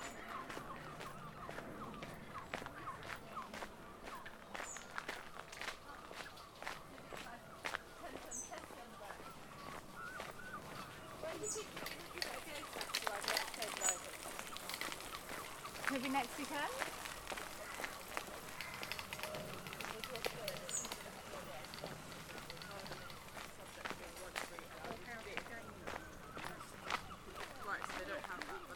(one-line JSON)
{"title": "Riverside Walk by Hammersmith Bridge, London, UK", "date": "2016-08-18 10:53:00", "description": "Walk along the Riverside Path by the River Thames in Hammersmith / Barnes. Sounds of walking, water and other birds, walkers, human conversation, bicycles, light aircraft. Recorded on Zoom H5 with built-in stereo mics.", "latitude": "51.49", "longitude": "-0.23", "altitude": "6", "timezone": "GMT+1"}